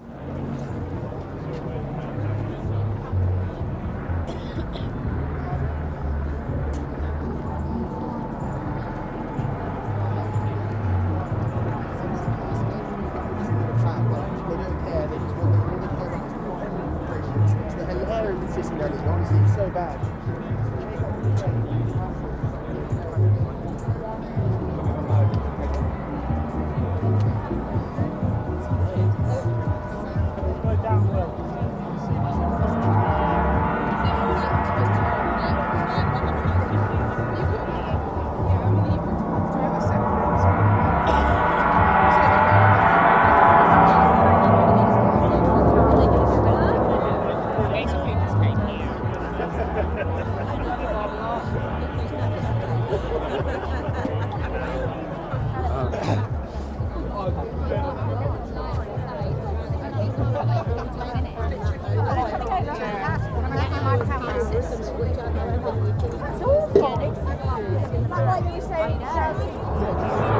Waterloo Bridge South Bank (Stop N), South Bank, London, UK - Extinction Rebellion easter 2019: police helicopters and gongs
Large demonstrations often create strange surreal moments and the extinction rebels are particularly inventive. Three large Chinese gongs have been brought to Waterloo Bridge to add to the trees, plants, beautiful coloured paper insects and everything else. Their sound blends with the people, bass sound system and police helicopters overhead.